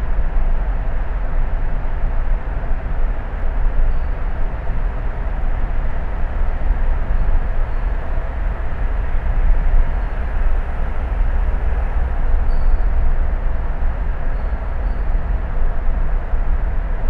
Sydney NSW, Australia - Harbour Tunnel
A drive through the Sydney Harbour Tunnel.
Port Jackson NSW, Australia, December 2015